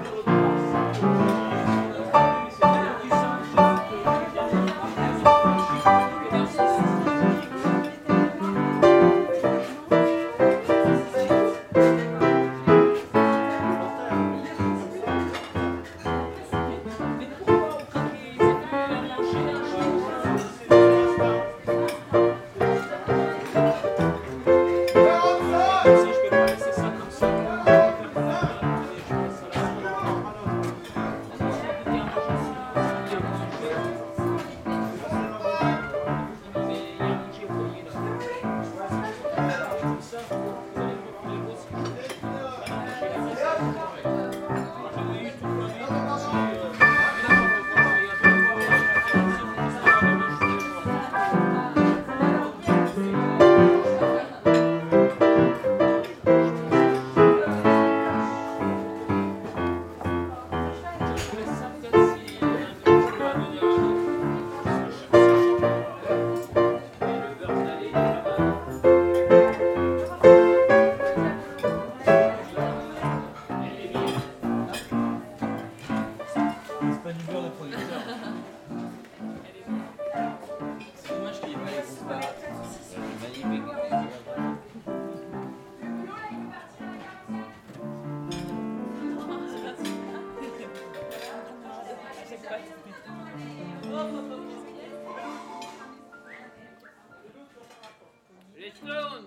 Blainville-sur-Mer, France - La Cale
People eating at La Cale restaurant with a piano player, Zoom H6
1 November